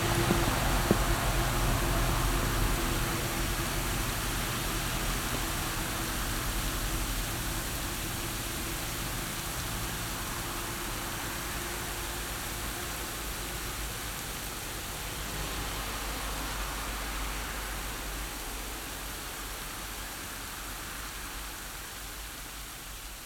The Damned's Condoms/ in memory of Our glorious Heroes.